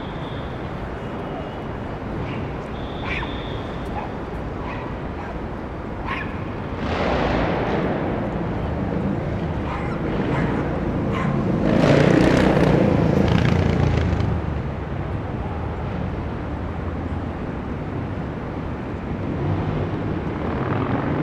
Sound of a dog barking at traffic in Lexington Ave.
Sound of different vehicles (cars, buses, motorcycles, bikes, etc).
United States, 25 March 2022, 15:00